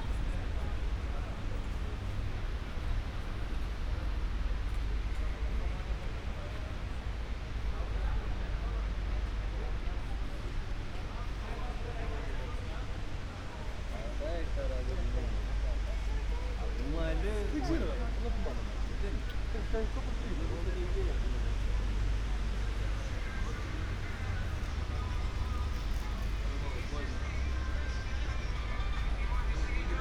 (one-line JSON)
{"title": "Athen, Piräus - walk from harbour to metro station", "date": "2016-04-05 21:30:00", "description": "a short walk from the pier to the metro station, with focus on the station ambience\n(Sony PCM D50, Primo EM172)", "latitude": "37.95", "longitude": "23.64", "altitude": "10", "timezone": "Europe/Athens"}